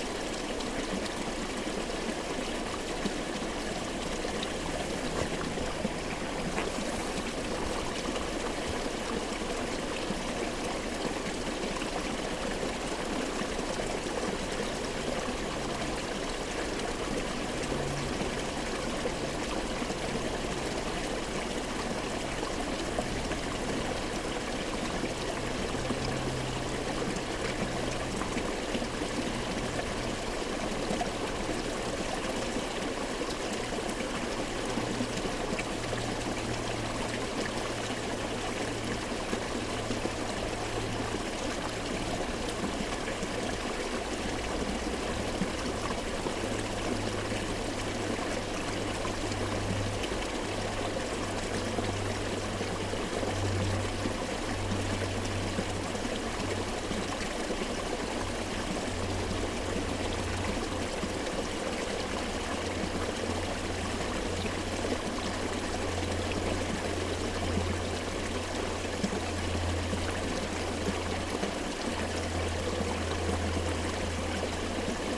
The burn beside the old water mill, part of the Croft House Museum, Dunrossness, Shetland Islands, U - The burn by the old watermill
This is the sound of the small burn that runs past the restored Watermill near the Croft House Museum. In Shetland many people at one time had access to a small watermill, where they could grind down grains using the power of the water in the vicinity. Water was diverted into the mill via a series of stone waterways, and diverted away again when not in use, in order to preserve the paddles inside. There is an old Shetland superstition which involved throwing a ball of yarn into an old watermill on Halloween in order to hear the voice of one's future husband - [taken from the Tobar an Dualchais site: On Halloween a girl would take a ball of wirsit [worsted yarn] to an old watermill and throw it down the lum [chimney]. She would wind the ball back up and as it reached the end she would ask, "Wha haad's my clew [ball of wool] end?" Then she would hear the voice of her future husband speaking.]
Shetland Islands, UK, 1 August, ~13:00